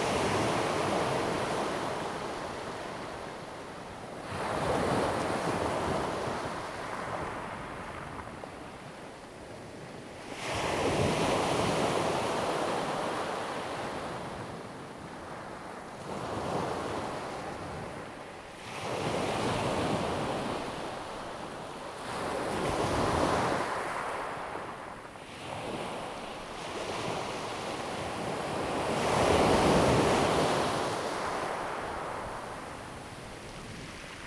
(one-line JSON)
{"title": "Jimowzod, Koto island - Sound of the waves", "date": "2014-10-30 08:51:00", "description": "At the beach, Sound of the waves\nZoom H2n MS +XY", "latitude": "22.04", "longitude": "121.53", "altitude": "10", "timezone": "Asia/Taipei"}